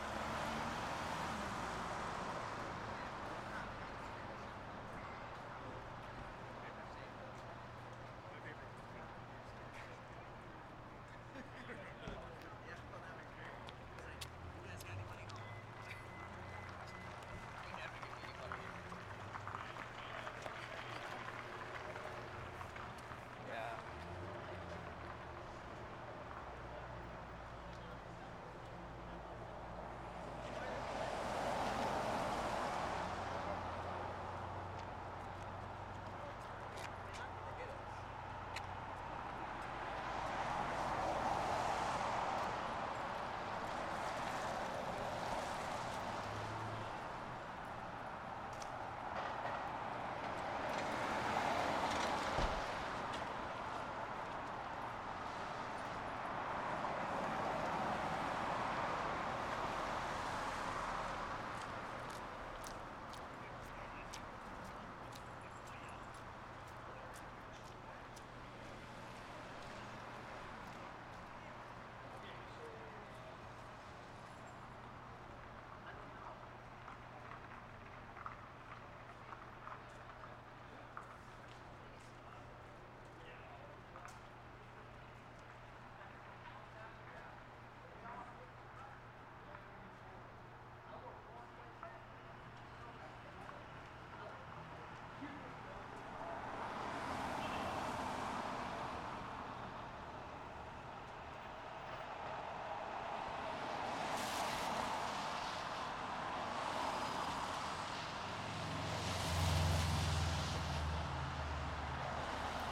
Corner of Holly and Railroad, Bellingham, WA, USA - Passersby
First installment of my project to document the sounds of Bellingham.
This is right at the hub of downtown Bellingham. just passersby.